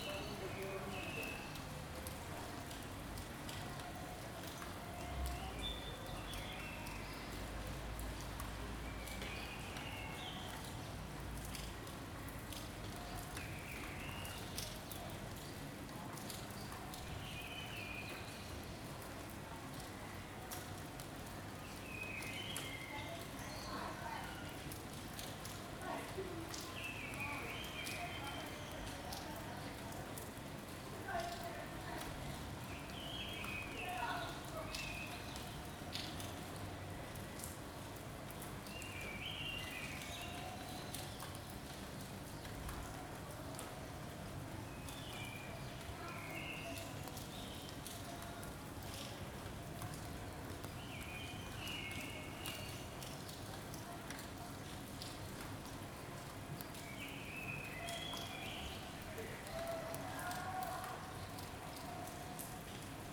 Carrer de Joan Blanques, Barcelona, España - Rain18042020BCNLockdown
Raw field recording made from the window during the COVID-19 Lockdown. Rain and sounds from the city streets and the neighbours. Recorded using a Zoom H2n.